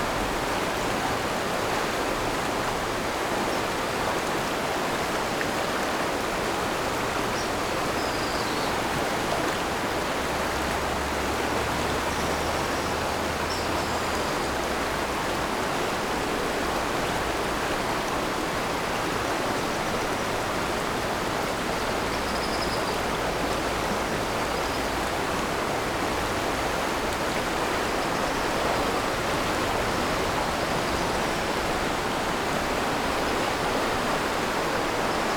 安農溪, 三星鄉大隱村 - Under the bridge
Streams and swallows, Stream after Typhoon, Traffic Sound, Under the bridge
Zoom H6 MS+ Rode NT4
Yilan County, Sanxing Township, 大埔, 25 July 2014, 16:18